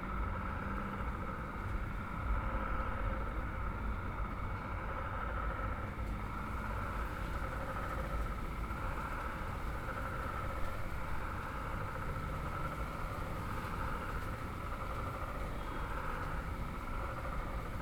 Broads Rd, Lusaka, Zambia - Lusaka evening with toads...
listening out into the urban hum around the backpackers...
Lusaka Province, Zambia, 13 June